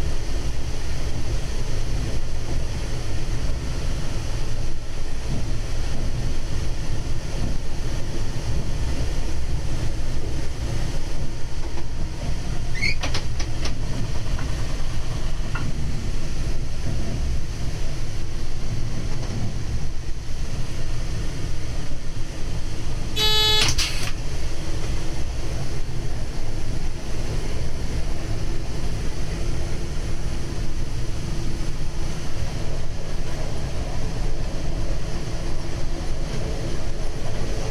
Train, Verviers - Pepinster
A ride in the driver's cabin of a Belgian AM66 electric railcar going from Verviers Central to Pepinster. Bell = green signal, buzzer = dead man's circuit. Binaural recording with Zoom H2 and OKM earmics.